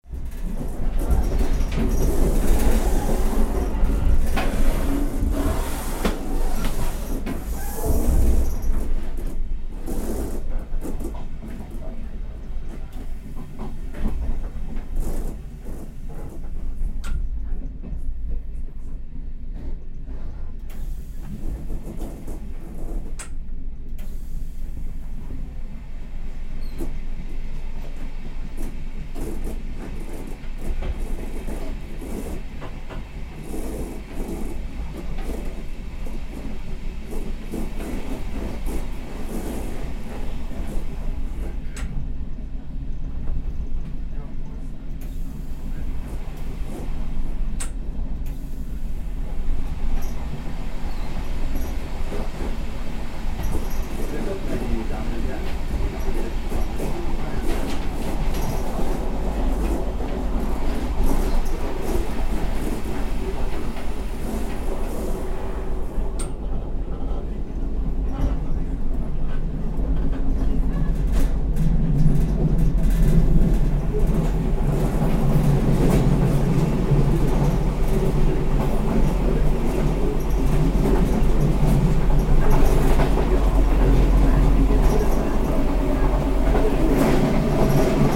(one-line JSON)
{"title": "inside train munich - rosenheim", "description": "recorded june 6, 2008. - project: \"hasenbrot - a private sound diary\"", "latitude": "47.97", "longitude": "12.01", "altitude": "493", "timezone": "GMT+1"}